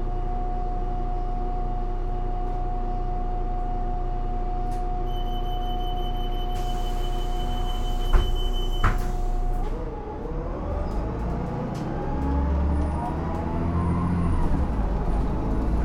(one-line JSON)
{"title": "Poznan, Sobieskiego Bus Depot - line 93", "date": "2012-08-28 22:16:00", "description": "waiting for the bus to depart + ride 3 stops.", "latitude": "52.46", "longitude": "16.92", "altitude": "92", "timezone": "Europe/Warsaw"}